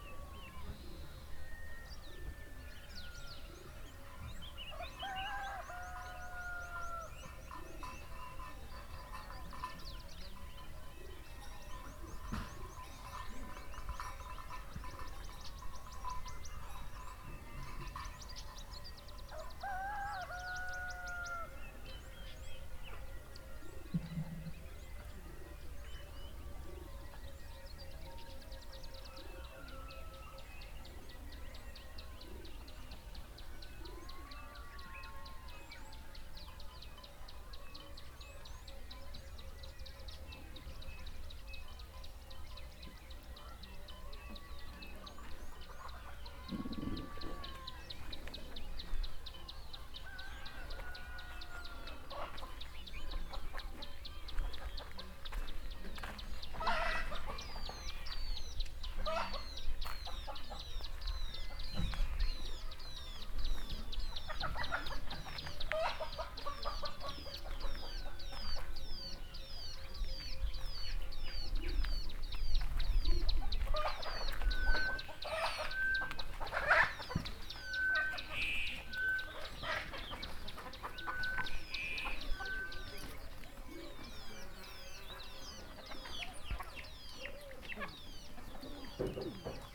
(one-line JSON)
{"title": "Harmony farm, Choma, Zambia - early morning work in the fields", "date": "2018-09-06 06:15:00", "description": "morning birds and voices of people working in the fields somewhere out there....", "latitude": "-16.74", "longitude": "27.09", "altitude": "1264", "timezone": "Africa/Lusaka"}